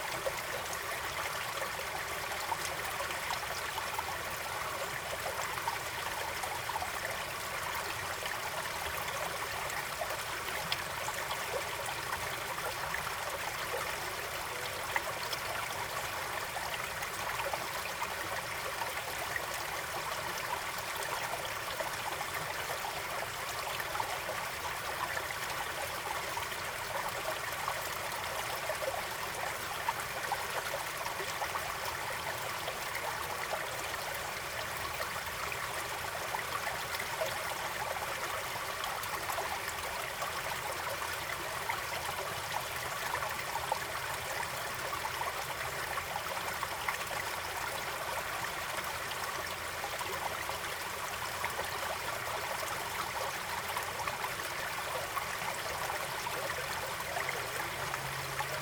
Austin, TX, USA - Gurgling Spring & Faint Conversation
Recorded with a Marantz PMD661 and a pair of DPA4060s.